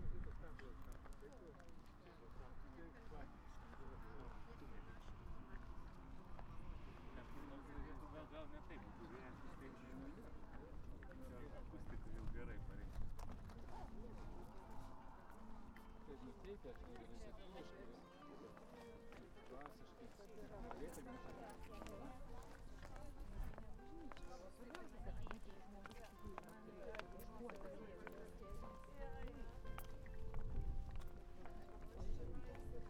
Šiaulių rajonas, Lithuania - hill of crosses-Šiauliai
hill of crosses-Šiauliai
7 August